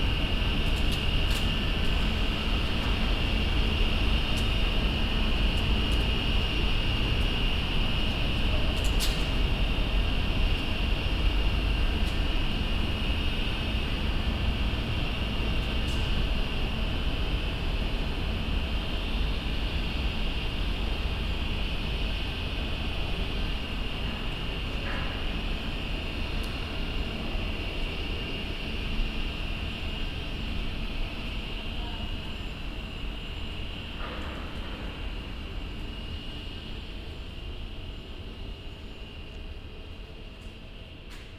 At the main station of Hagen at the tracks daytimes on a fresh, windy day.
You can listen to a general atmosphere of the track situation reverbing under a glass roof. An airy permanent noise, announces, suitcases rolling by. At the end the sound of tape being ripped by a man who repairs his trolley.
soundmap d - topographic field recordings and socail ambiencs